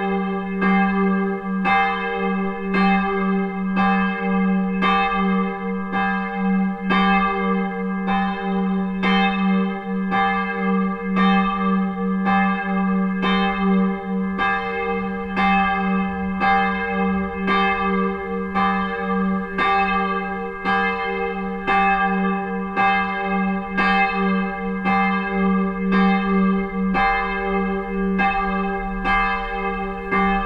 At the church of hosingen on a fresh cold and wind summer evening. The sound of the evening bells. If you listen careful you can hear the swinging of the bells before and after they chime.
Hosingen, Kirche, Abendglocken
Bei der Kirche von Hosingen an einem kalten und windigen Sommerabend. Das Läuten der Abendglocken. Wenn Du sorgfältig hinhörst, dann kannst Du das Schwingen der Glocken vor und nach dem Schlag hören.
Hosingen, église, carillon du soir
A l’église d’Hosingen, un soir d’été frais et venteux. Le son du carillon du soir. En écoutant attentivement, on entend le bruit du balancement des cloches avant et après le carillon.
Hosingen, Luxembourg, 12 September, 18:47